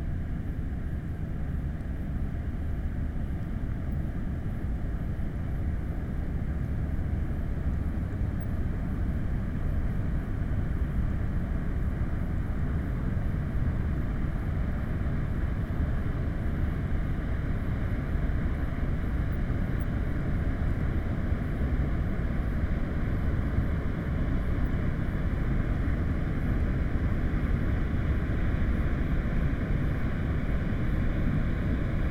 Grand-Couronne, France - Boat on the Seine river
By night, the Viking Kadlin boat is passing by on the Seine river.